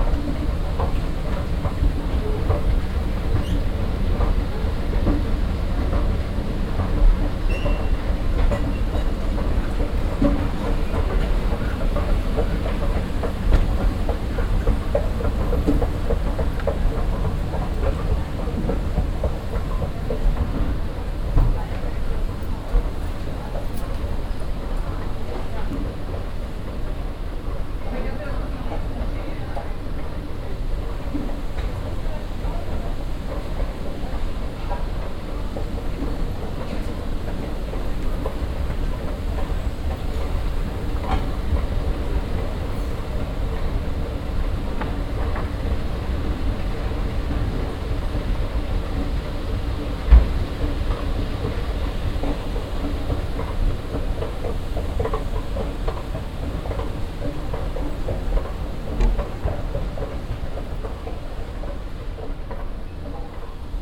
In einer großen Buchhandlung. Fahrt durch die Abteilungen mit der Rolltreppe.
Inside a big book store in the morning. Driving through the departments on moving staircases.
Projekt - Stadtklang//: Hörorte - topographic field recordings and social ambiences